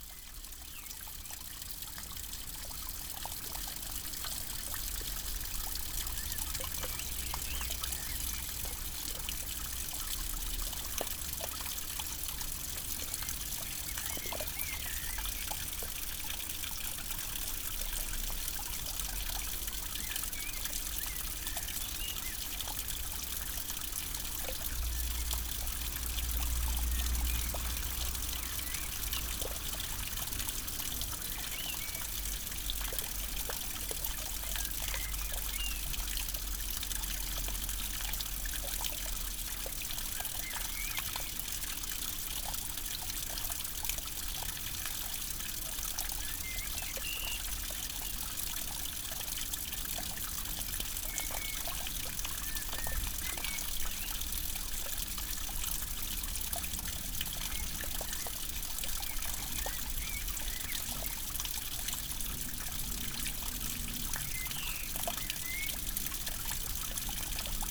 Mont-Saint-Guibert, Belgique - Rain on the nettles
We are in an abandoned farm. A constant rain is falling since this morning. A dismantled gutter dribble on the nettles.
Mont-Saint-Guibert, Belgium, 22 May 2016, 21:00